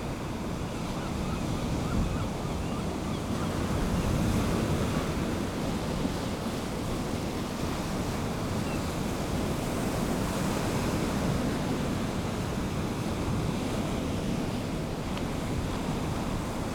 East Pier, Whitby, UK - Mid tide on the slip way ...
Mid tide on the slip way ... lavalier mics clipped to bag ... bird calls from rock pipit and herring gull ...
11 January 2019, 09:40